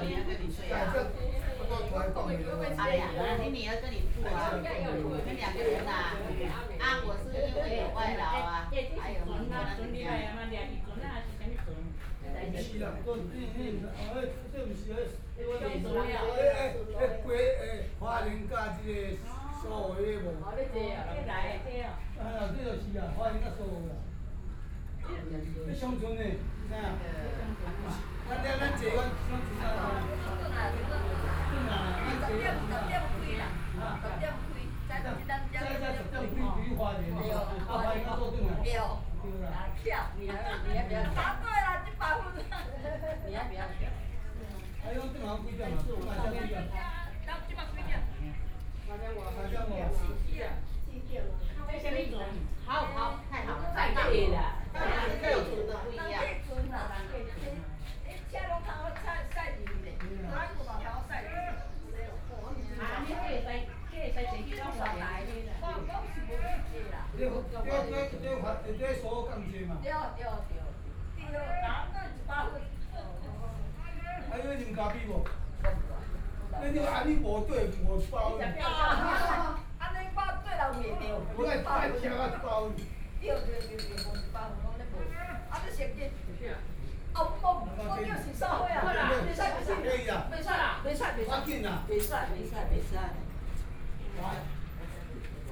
in the Park, Traffic Sound, Hot weather, A group of tourists being brewed coffee and a chat
Suao Township, Yilan County, Taiwan, 28 July